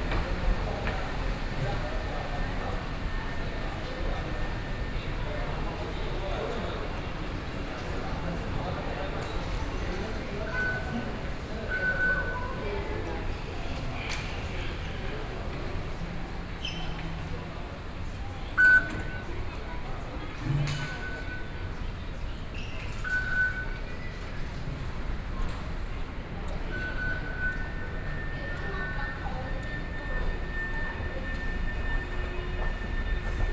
Wanfang Hospital Station - soundwalk
walking in the MRT Station, Sony PCM D50 + Soundman OKM II
Wenshan District, Taipei City, Taiwan, 30 September 2013, 18:14